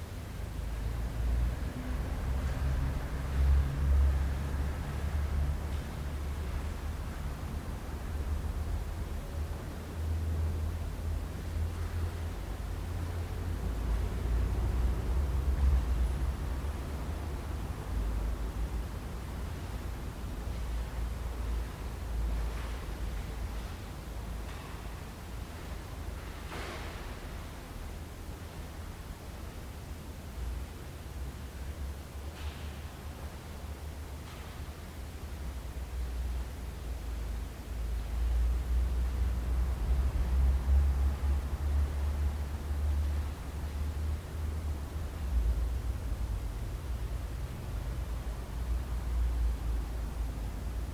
Bleialf, Deutschland - Geräusche in der Kirche / Sounds in the Church
Geräusche in Sankt Maria Himmelfahrt, Schritte, Autos draußen.
Noises in St. Mary of the Assumption, steps, cars outside.
Bleialf, Germany